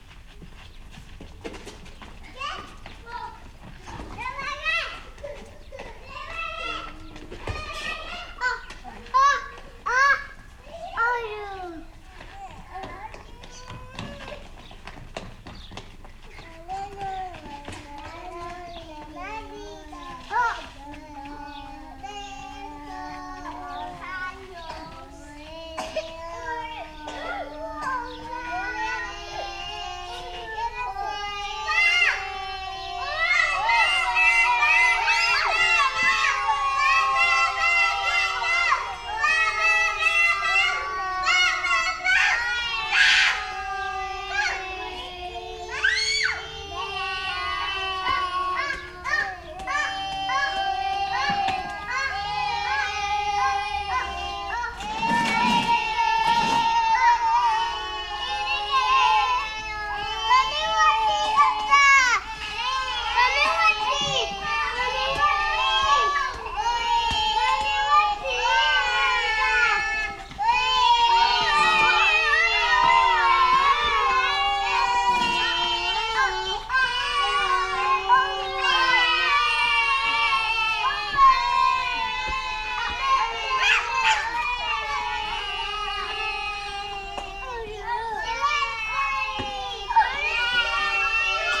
SBG, El Patufet - Niños en el patio de juegos
Los más pequeños de la escuela infantil El Patufet se divierten en su patio el último dia antes de las vacaciones de verano.